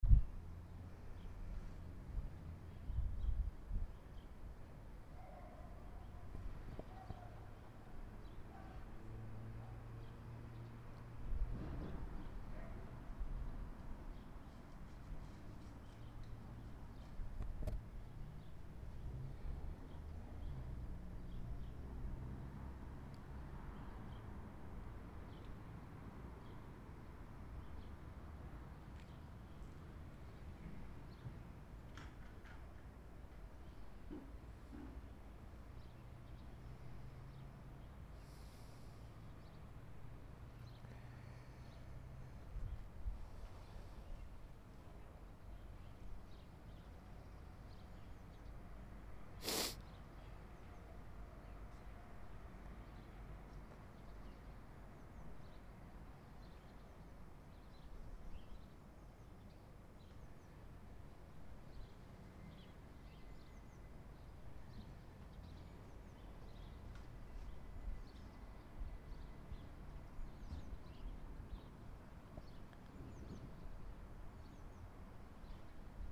Waldstadt II, Potsdam, Deutschland - Parkplatz
im Hauseingang Saarmunder Str. 60c
Potsdam, Germany, 14 January 2013, 08:15